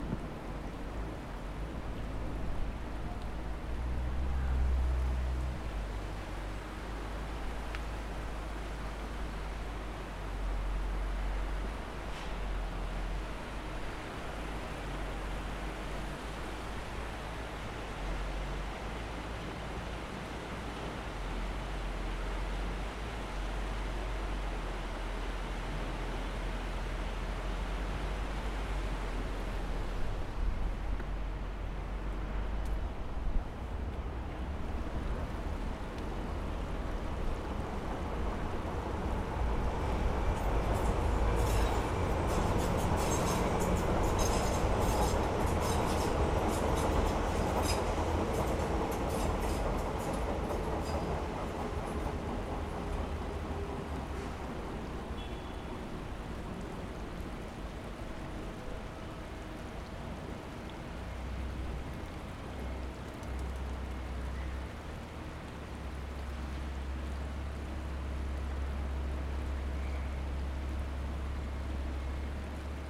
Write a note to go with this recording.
One of a series of sounds collected as part of an MA research project exploring phenomenological approaches to thinking about the aesthetics and stewardship of public space. Deptford Creek - a narrow, sheltered waterway; an inlet and offshoot of London's snaking River Thames - is one of the most biodiverse landscapes for its size in the city, and part of the dwindling 2% of Thames’ tidal river edges to remain natural and undeveloped – a crucial habitat for London’s at-risk wildlife.